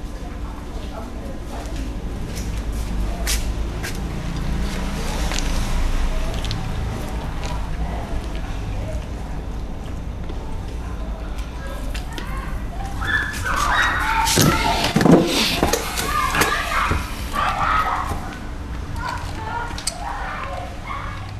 Cruz das Almas, BA, República Federativa do Brasil - Rua da Assembleia
Gravação do ambiente doméstico com outros sons das casas vizinhas e pessoas na rua.
Cruz das Almas - Bahia, Brazil